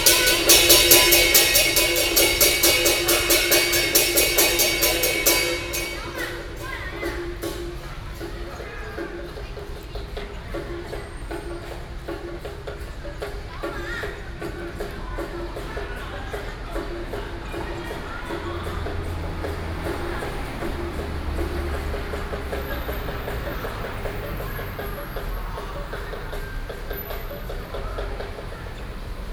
Beitou, Taipei - traditional musical instruments
11 July, 14:37